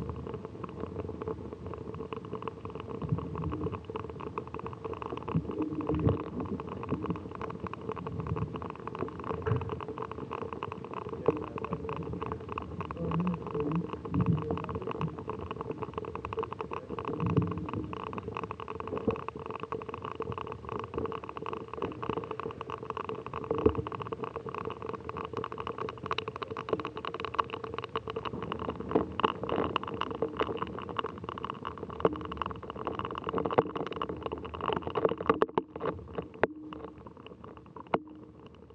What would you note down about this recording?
Ice formation recorded a contact mic with a drill bit attachment.